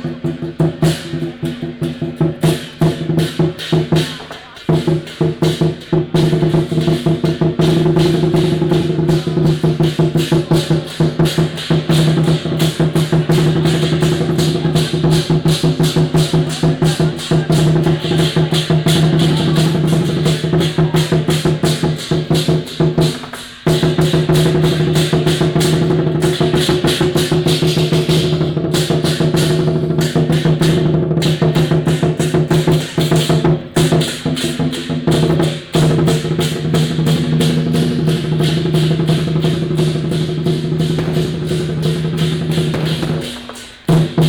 Baixi, Tongxiao Township 苗栗縣 - Traditional temple fair
Matsu Pilgrimage Procession, Crowded crowd, Fireworks and firecrackers sound
Miaoli County, Tongxiao Township, March 2017